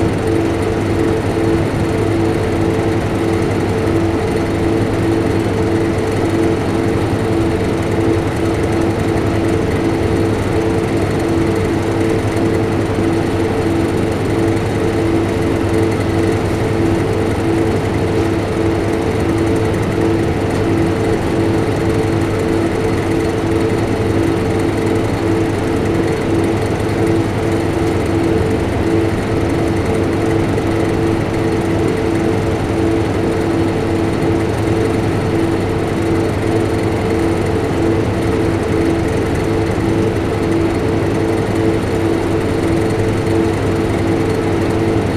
Jaroczynskiego, Poznan - walk-in fridge fan
recorded inside of a walk-in fridge. a room in a basement which is a big fridge for storing products for a restaurant. recorder was placed right below the cooling unit. you can hear the swish and rattle of the fan. (sony d50 internal mics)